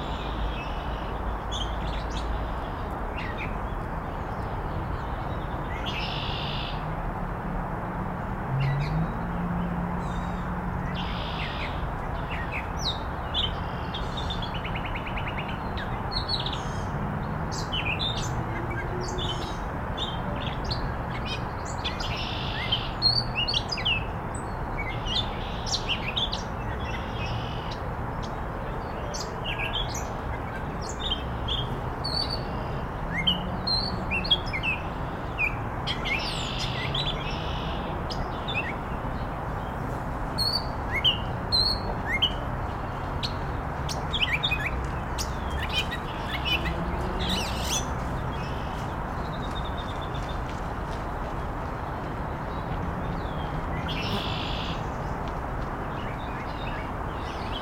Ridgewood Reservoir soundscape.
Zoom H6
United States, June 2021